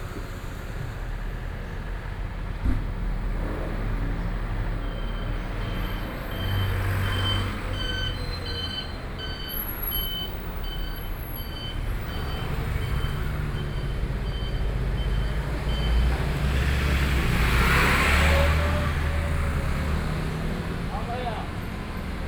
walking in the Street, Traffic Sound, To the east direction